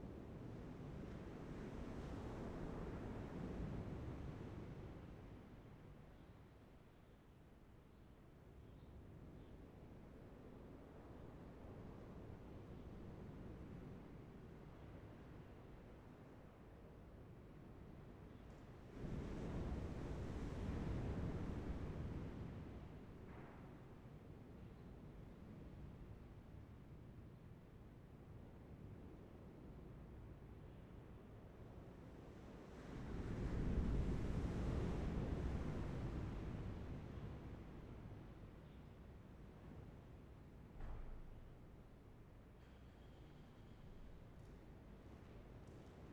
午沙村, Beigan Township - Abandoned waiting room
Sound of the waves, Abandoned waiting room
Zoom H6 XY